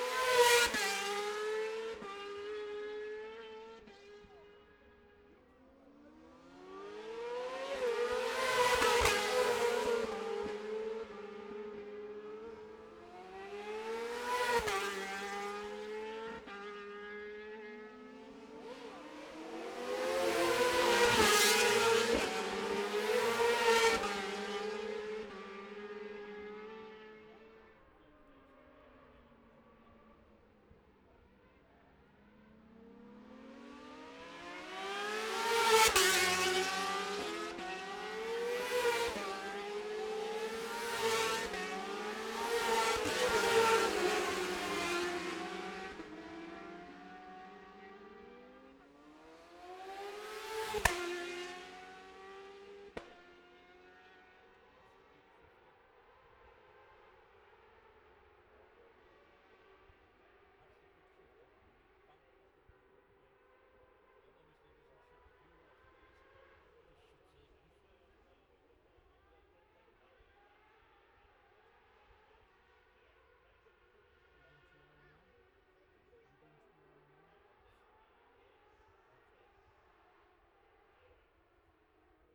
Jacksons Ln, Scarborough, UK - olivers mount road racing ... 2021 ...
bob smith spring cup ... 600cc heat 3 race ... dpa 4060s to MixPre3 ...
22 May 2021, 14:51